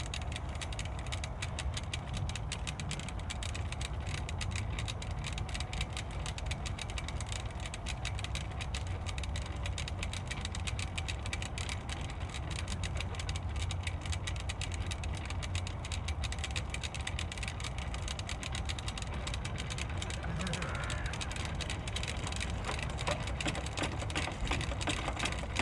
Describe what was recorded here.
wind wheel build of old bike parts, urban gardening area, (SD702, AT BP4025)